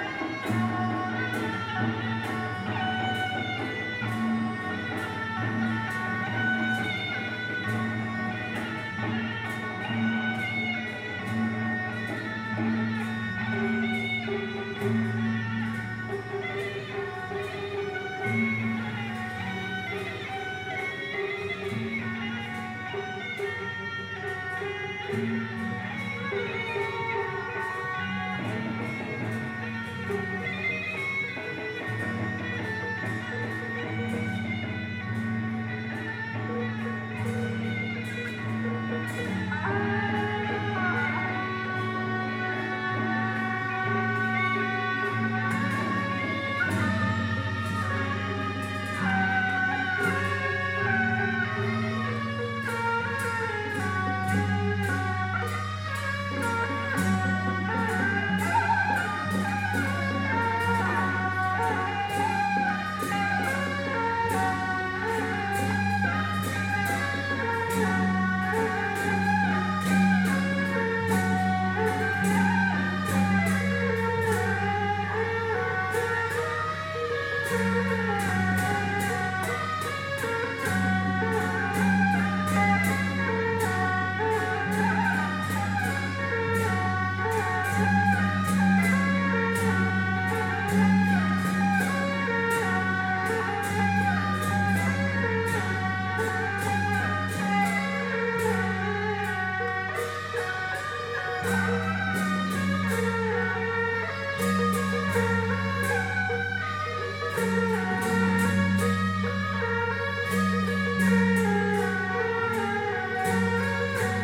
大仁街, Tamsui District, New Taipei City - Traditional festival parade
Traditional festival parade
Zoom H2n MS+XY